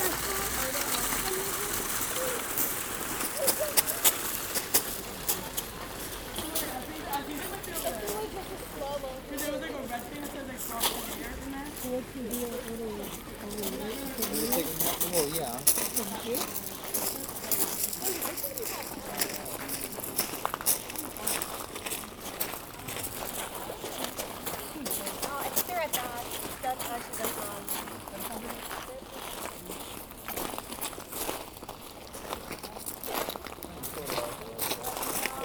Chartres, France - Tourists behind the cathedral
Cathedral of Chartres - In the gravels bordering the cathedral gate, people walk quietly. A group of American tourists achieves a long selfie session, which requires appreciation and approval of every protagonist. We are simply there in the everyday sound of Chartres.
31 December, 12:45